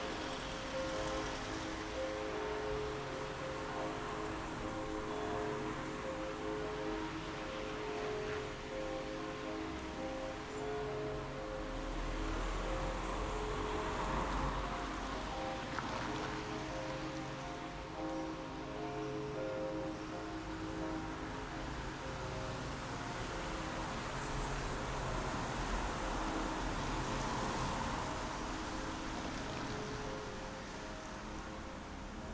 E. van Esbroeckstraat, Londerzeel, België - Church bells, cars passing by and a dog
Church bells, cars passing by and a dog
Recorded from rooftop window streetside.
ZOOM H6 XY mic 120° + compression added with Logic ProX